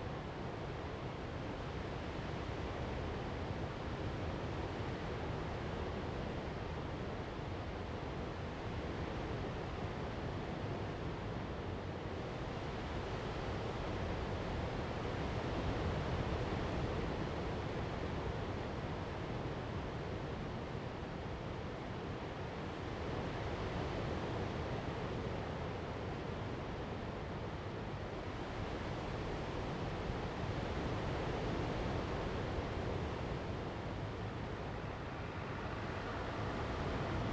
公舘村, Lüdao Township - behind the rock
behind the rock, sound of the waves, Traffic Sound
Zoom H2n MS +XY
Lüdao Township, Taitung County, Taiwan, October 2014